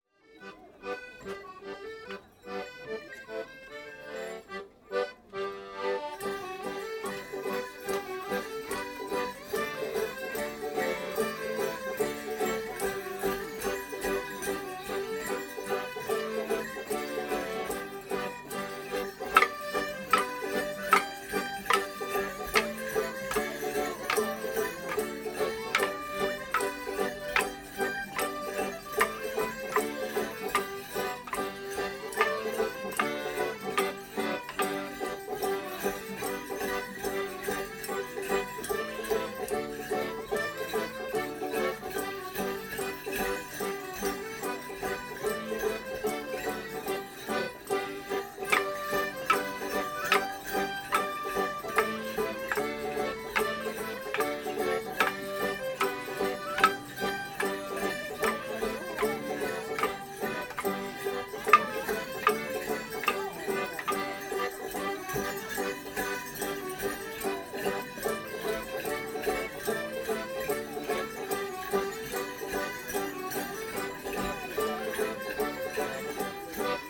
Friends of Reading University Heritage Trail, Reading University Campus, Reading, UK - Shinfield Shambles Morris Side
Shinfield Shambles are a local morris side who dance in the Welsh Border style. They are based in the village of Shinfield. Each dancer wears an outfit themed around a single shade, with a rag jacket covered in small pieces of fabric; a hat covered in flowers of the same hue; and a coloured skirt and shoelaces to match. Bells are wound into everyone’s shoelaces and the musicians are dressed in all shades of the rainbow. The Shinfield Shambles were performing last Sunday at an event at the Museum of Reading and I enjoyed the inclusive style of their dancing which featured several numbers in which everyone was invited to participate. Perhaps even slightly more than the sounds of the actual performances, I liked that wherever the morris side walked, they left a trail of bells with their feet. One member of the side commented that after a while you get to know who is who from the distinctive sounds of their bells; I don’t doubt it.
Earley, Reading, UK, 7 May 2017